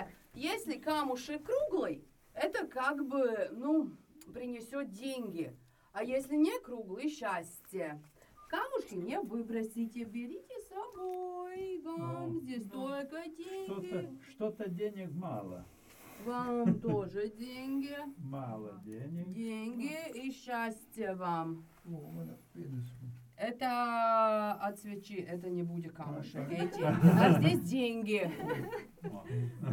Riezupe sand cave excursion
Excusion in Riezupe quartz sand caves. 11 meters under ground.
Latvija